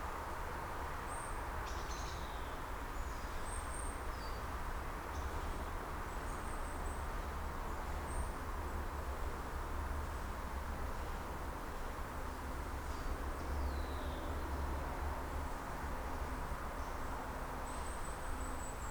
forest near Bonaforth, Deutschland. Tunnel under railway, shotguns - Tunnel under railway - shotguns
small tunnel under railroad with strange resonance, 35 meters long, 1,5 meter wide, at one end 3 meters high, other end 1.6 meters high. Recorded using 2 shotgun microphones: right channel at one end pointing to the forest, left channel at the other end pointing inside the tunnel.
Hann. Münden, Germany, 6 August 2014, 12:32